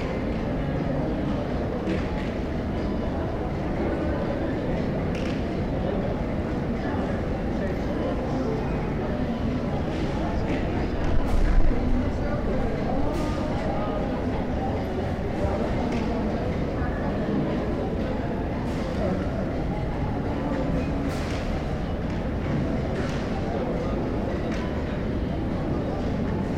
Jasmijn, Leidschendam, Nederland - Leidschendam Shoppingmall
A recording of the renewed Shopping Mall of The Netherlands. Country's biggest shopping mall. Google earth still shows the old mall. Recording made with a Philips Voice Tracer with medium mic settings.